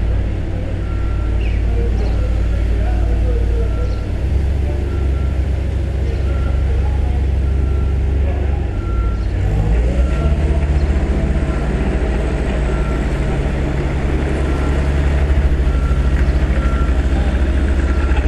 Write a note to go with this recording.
Lidl construction site, Easter Road, 19th June 2018, recorded from my bedroom window